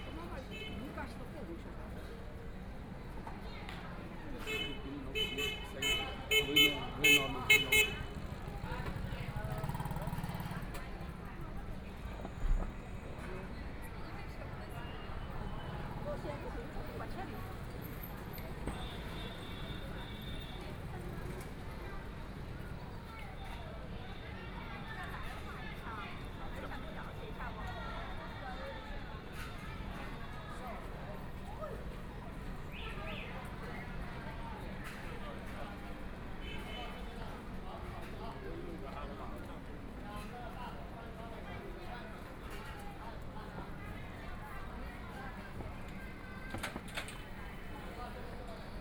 Shanxi Road, Shanghai - in the corner
Standing next to the restaurant, Shopping street sounds, The crowd, Trumpet, Brakes sound, Footsteps, Binaural recording, Zoom H6+ Soundman OKM II